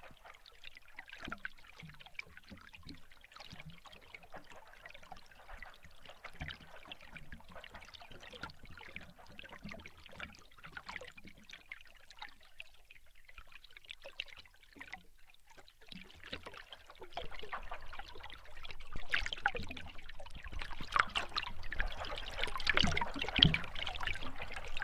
under binkchorstbrugge, Den haag
hydrophones under water, nikolaj Kynde
Laak, The Netherlands, 15 December 2011, 16:00